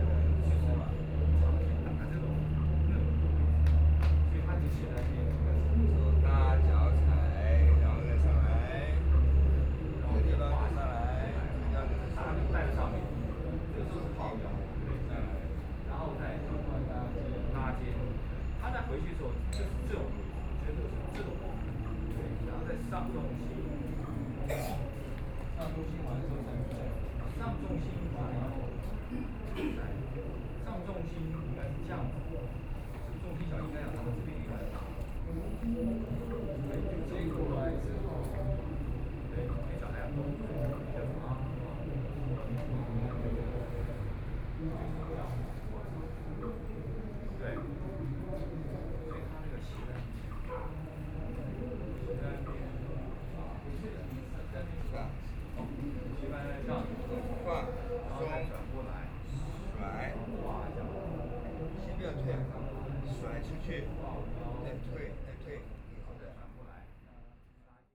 BiHu Park, Taipei City - T'ai chi ch'uan
Frogs sound, Insects sound, Birdsong, Dogs barking, A group of people are practicing T'ai chi ch'uan, Traffic Sound, Aircraft flying through